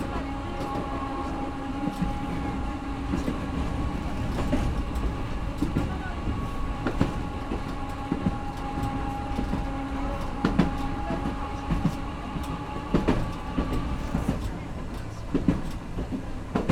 Tokyo, Nishiwaseda district, Toden Arakawa Line - ride on the only tram line in tokyo
the tram was an rather old, small car. operated manually with a lever, rolling noisily across the city. conversations of passengers, announcements from the speakers (on all public transport in Japan announcements are made by the driver with a headset, along with the prerecorded massages), creaking and swishes of the car, street noise.